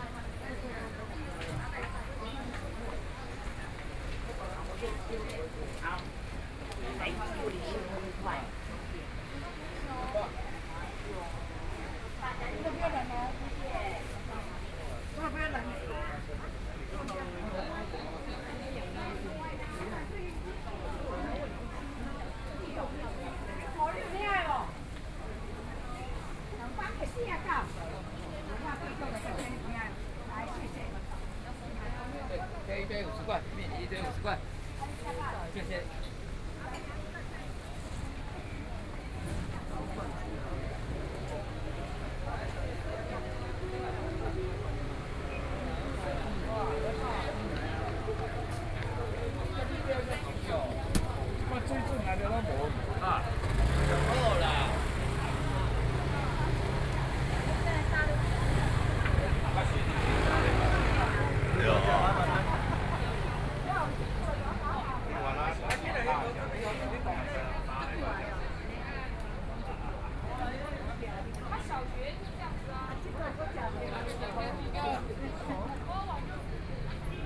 {"title": "台北市中山區集英里 - Walking through the traditional market", "date": "2014-06-26 13:26:00", "description": "Walking through the traditional market, Traffic Sound\nSony PCM D50+ Soundman OKM II", "latitude": "25.06", "longitude": "121.52", "altitude": "11", "timezone": "Asia/Taipei"}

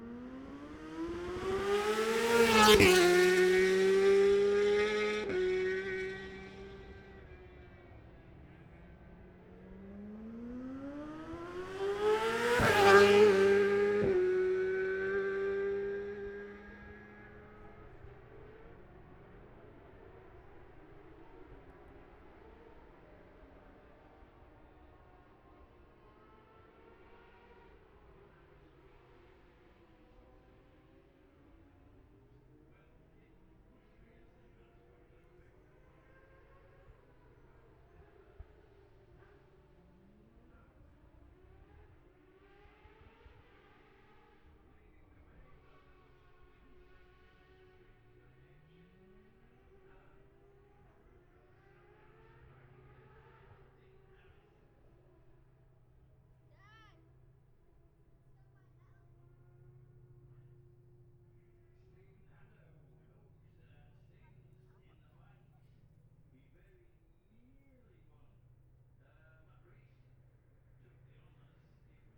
{"title": "Jacksons Ln, Scarborough, UK - olivers mount road racing ... 2021 ...", "date": "2021-05-22 09:35:00", "description": "bob smith spring cup ... 600cc group A practice ... luhd pm-01mics to zoom h5 ...", "latitude": "54.27", "longitude": "-0.41", "altitude": "144", "timezone": "Europe/London"}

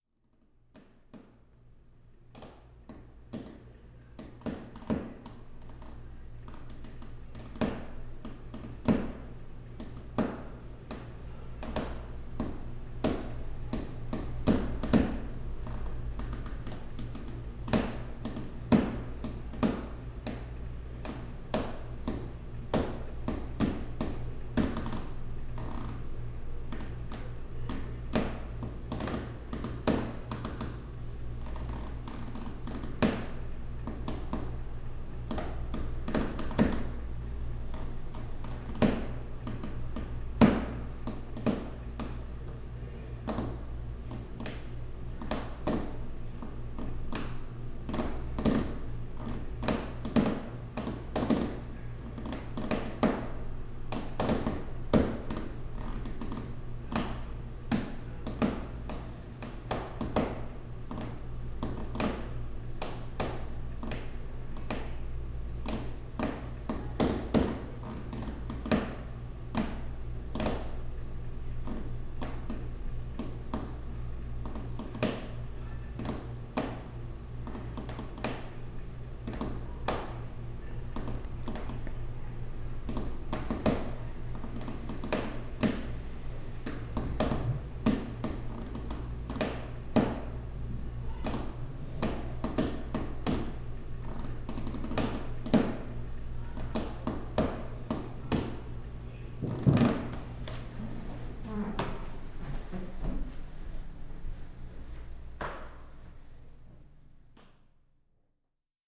{"title": "Floorboards inside Fairchild House, New Orleans, Louisiana - Creaky Floorboards, NOLA", "date": "2012-09-05 17:00:00", "description": "If only I had brought contact mics. Creaky floorboards, ceiling fan, bare feet, annoyed resident.\nCA-14(quasi banaural) > Tascam DR100 MK2", "latitude": "29.94", "longitude": "-90.08", "altitude": "6", "timezone": "America/Chicago"}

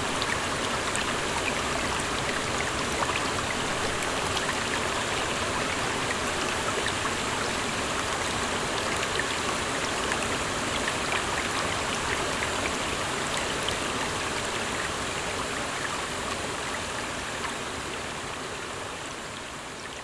{"title": "Garrison, NY, USA - Indian Brook Falls", "date": "2020-02-29 12:40:00", "description": "Indian Brook Falls.", "latitude": "41.40", "longitude": "-73.93", "altitude": "92", "timezone": "America/New_York"}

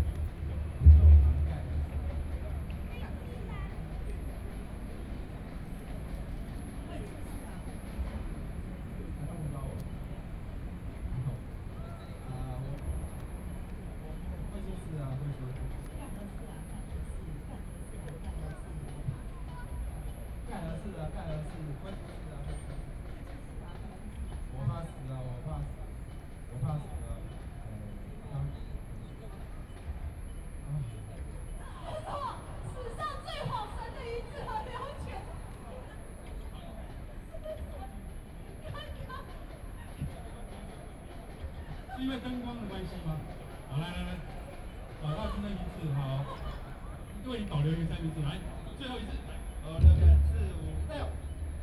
{"title": "Freedom Square, Taiwan - drums", "date": "2013-05-24 20:47:00", "description": "Distant drums being rehearsal, Sony PCM D50 + Soundman OKM II", "latitude": "25.04", "longitude": "121.52", "altitude": "8", "timezone": "Asia/Taipei"}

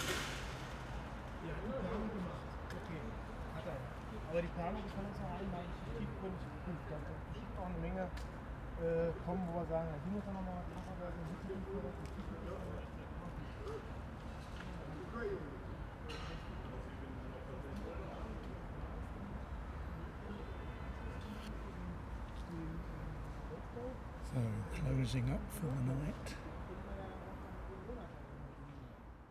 {"title": "Behind the Haus der Statistik, Berolinastraße, Berlin, Germany - Behind the Haus der Statistik", "date": "2021-09-09 17:07:00", "description": "Surrounded by high derelict building, with crumbling white concrete this square bizarrely has a covered raised area in its midst. Unclear what for. A large drinks lorry finishes its delivery and drives off. A couple embrace, kissing passionately at length, under trees along the edge. The building site workers are stopping for the day, dragging barriers across the entrances and locking them. They pass bu chatting towards their cars.", "latitude": "52.52", "longitude": "13.42", "altitude": "40", "timezone": "Europe/Berlin"}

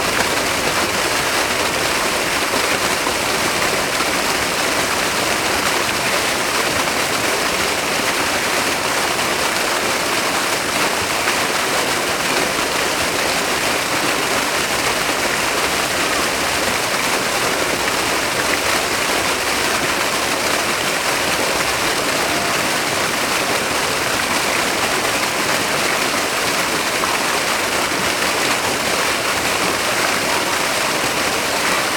{"title": "Fontaine Square Marcel-Rajman - Rue de la Roquette", "date": "2011-04-06 17:10:00", "description": "Square Marcel-Rajman, fontaine à 3 étages, square de la Roquette - Paris", "latitude": "48.86", "longitude": "2.38", "altitude": "46", "timezone": "Europe/Paris"}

